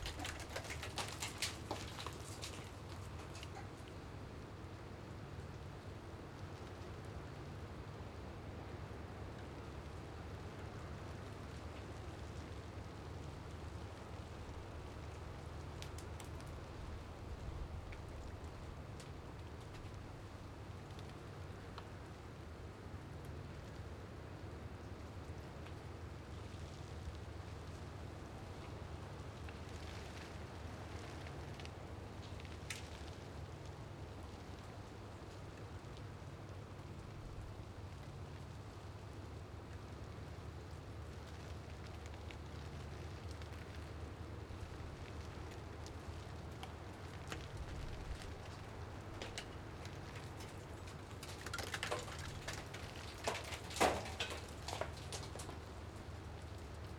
Lipa, Kostanjevica na Krasu, Slovenia - Ice falling from tower
Ice falling down from tv, radio antena tower on mount Trstelj, Slovenia 3.12.2020. In the background you can hear cracking sleet on a bush.
Recorded with Sounddevices MixPre3 II and Sennheiser ME66, HPF60hz.